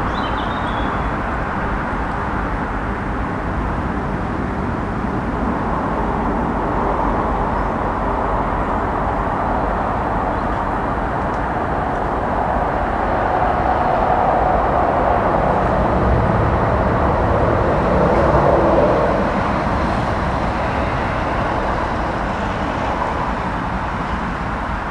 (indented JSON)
{
  "title": "Limerick City, Co. Limerick, Ireland - Ted Russel Park",
  "date": "2014-07-18 13:44:00",
  "description": "road traffic noise from Condell Road, industrial noise from across River Shannon, birds",
  "latitude": "52.66",
  "longitude": "-8.66",
  "altitude": "5",
  "timezone": "Europe/Dublin"
}